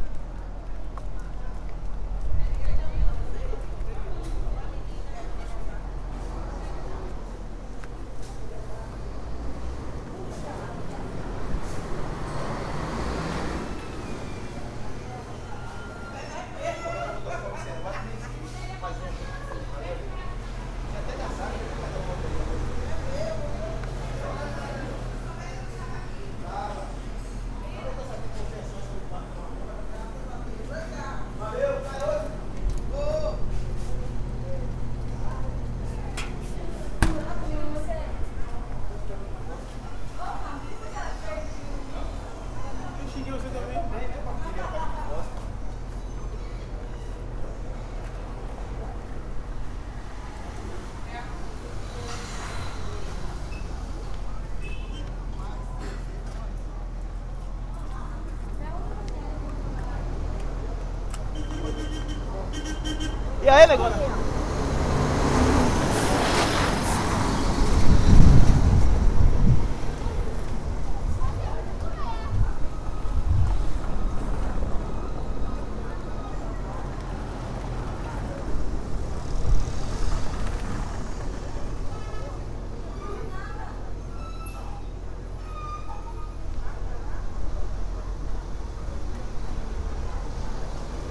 Cachoeira, BA, Brasil - Caminhada Pela Antonio Carlos Magalhães 2
Trabalho realizado para a disciplina de Sonorização I - Marina Mapurunga - UFRB - 2014
Anna Paiva
Bahia, Brazil, February 2014